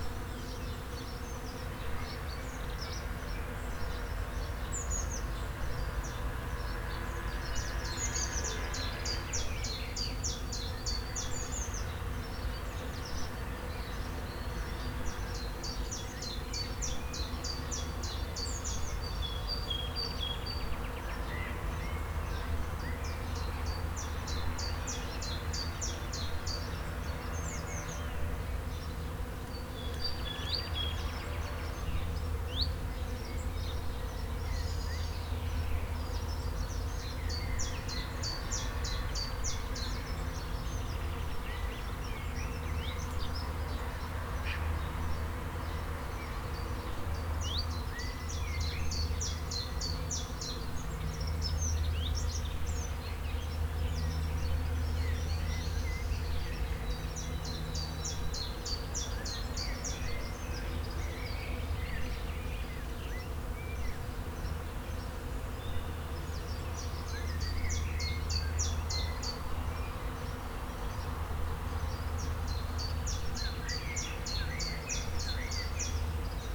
Lindlarer Str., Lohmar, Deutschland - Bienen im Weissdorn, Vögel mit Kreissäge
It is located near a forest and a field. The bees enjoy themselves in the hawthorn. Recordet with Zoom H4n on bench in our garden.